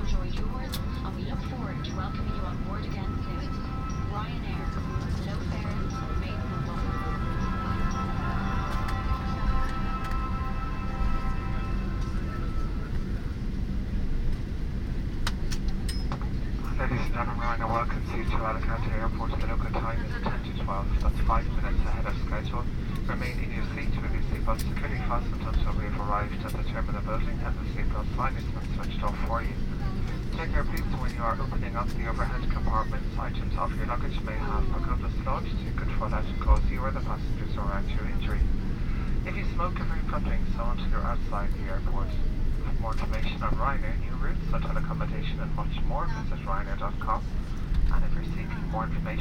Recording of a landing in Alicante. Ryanair flight from Krakow.
Recorded with Soundman OKM on Zoom H2n.
2 November 2016, 23:57